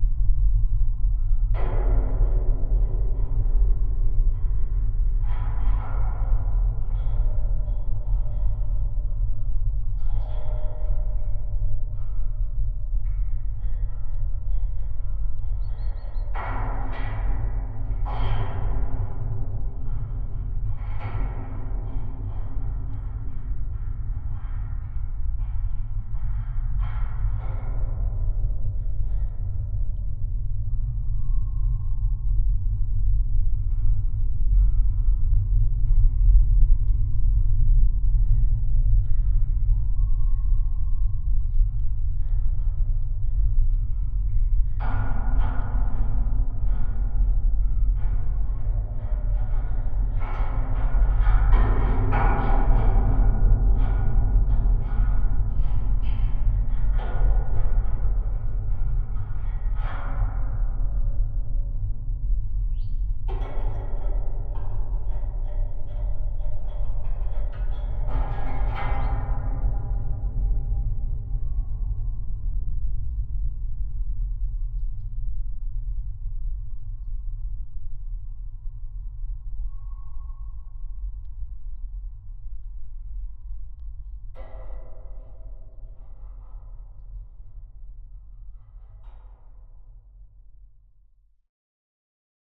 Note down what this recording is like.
new, shinny metallic ladder on watertower. listening through contact mics. calm evening....